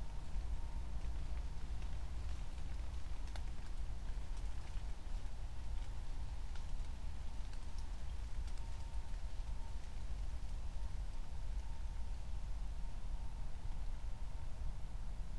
Königsheide, Berlin - forest ambience at the pond
1:00 drone, raindrops, frogs, distant voices and music